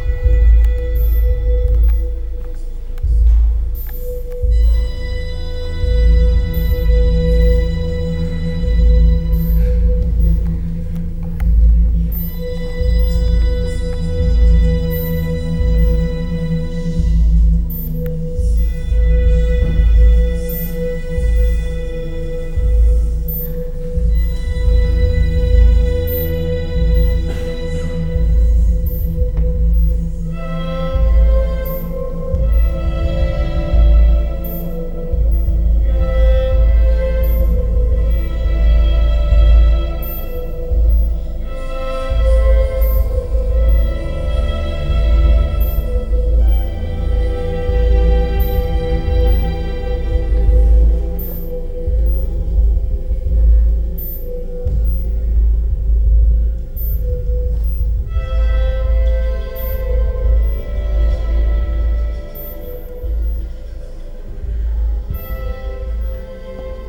{
  "title": "bonn, frongasse, theaterimballsaal, bühnenmusik killer loop",
  "description": "soundmap nrw - social ambiences - sound in public spaces - in & outdoor nearfield recordings",
  "latitude": "50.73",
  "longitude": "7.07",
  "altitude": "68",
  "timezone": "GMT+1"
}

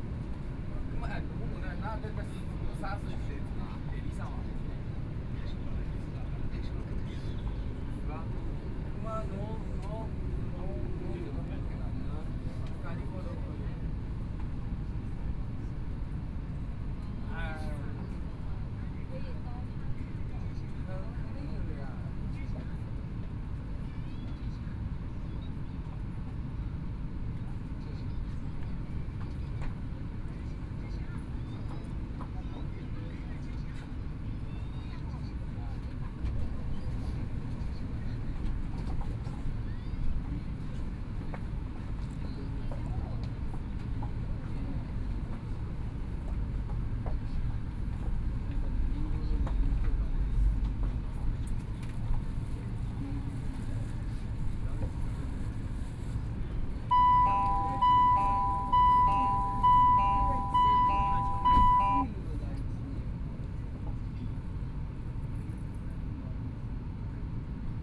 {
  "title": "Huangpu District, Shanghai - Line 10 (Shanghai Metro)",
  "date": "2013-11-26 18:14:00",
  "description": "from Xintiandi Station to Yuyuan Garden Station, Binaural recording, Zoom H6+ Soundman OKM II ( SoundMap20131126- 34)",
  "latitude": "31.22",
  "longitude": "121.47",
  "altitude": "9",
  "timezone": "Asia/Shanghai"
}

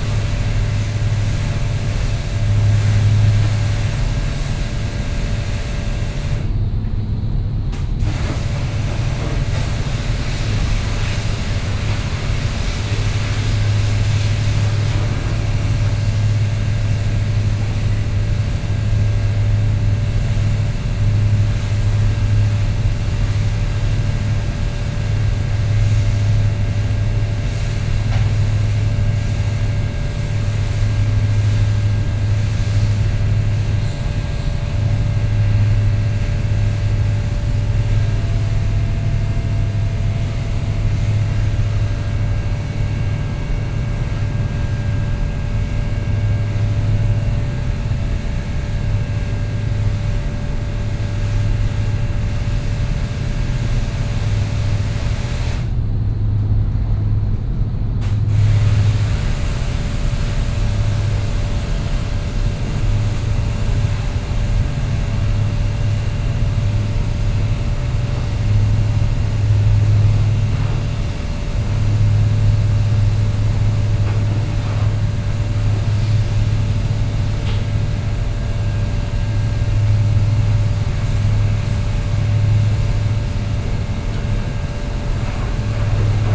{
  "title": "Speed Ferry Tarifa-Tanger",
  "date": "2011-04-04 10:15:00",
  "description": "on the top deck, a guy cleaning the ship with water",
  "latitude": "35.96",
  "longitude": "-5.71",
  "timezone": "Europe/Madrid"
}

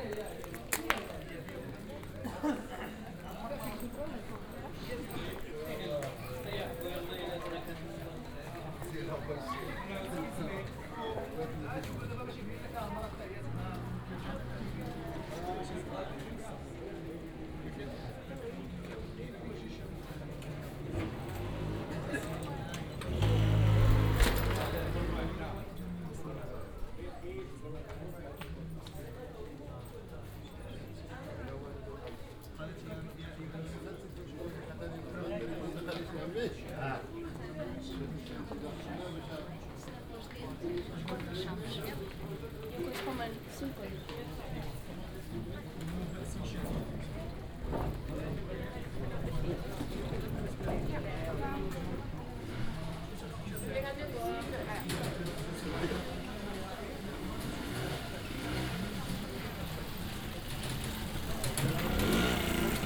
{
  "title": "El Ksour, Marrakesch, Marokko - street ambience at mosque Mouassin",
  "date": "2014-02-26 14:40:00",
  "description": "unexcited street live and ambience at mosque Mouassin\n(Sony D50, DPA4060)",
  "latitude": "31.63",
  "longitude": "-7.99",
  "timezone": "Africa/Casablanca"
}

